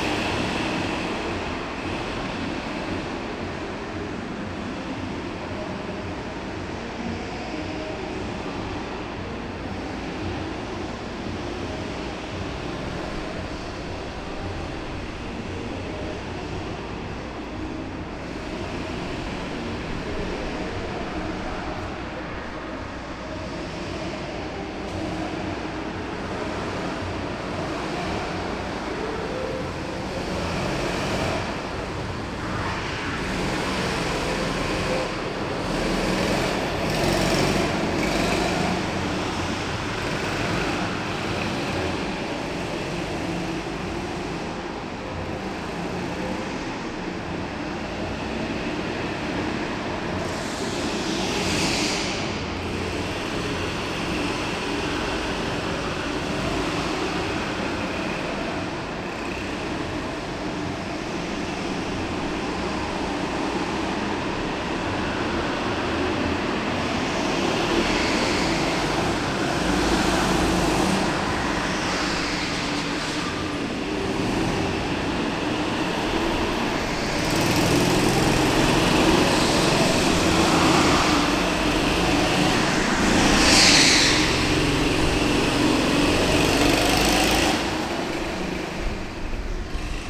berlin, werbellinstraße: kartbahn - the city, the country & me: indoor kart circuit
two young girls taking a few laps and having fun
the city, the country & me: august 22, 2010